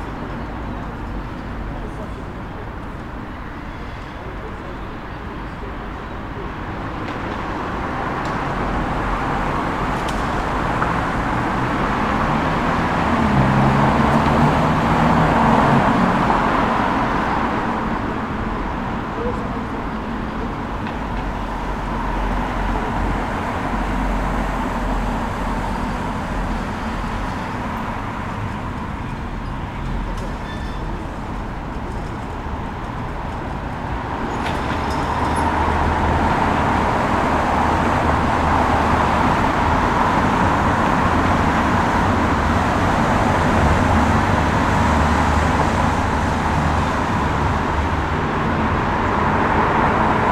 St-Annes Cathedral
Standing in the local park across the cathedral brought on new perspectives, I was able to stand in the middle of this giant circle area listening to people at different points in the park. There were kids somewhere in far back that were just hanging out together, to my left two men who were discussing something quite important, workers leaving the office, and even some guests for a local hotel to my right. This one spot generated multiple sonic activities as if we weren’t just in lockdown for almost three months. People just went back to what they were doing, as almost nothing happened.
Northern Ireland, United Kingdom, 4 July 2020